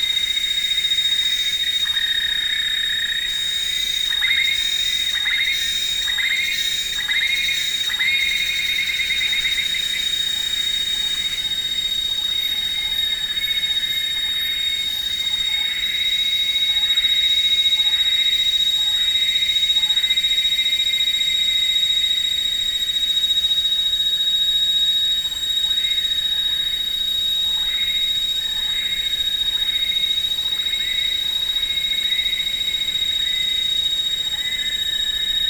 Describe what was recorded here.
Bukit Teresek Hill, rainforest ambience around noon, (zoom h2, binaural)